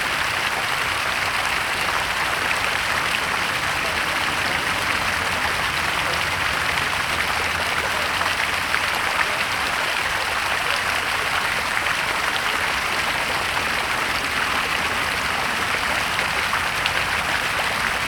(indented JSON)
{
  "title": "Powiśle, Warszawa, Pologne - Fontanna Mariensztacka",
  "date": "2013-08-15 16:52:00",
  "description": "Fontanna Mariensztacka, Ulica Marjensztat, Garbarska, Warszawa",
  "latitude": "52.25",
  "longitude": "21.02",
  "altitude": "89",
  "timezone": "Europe/Warsaw"
}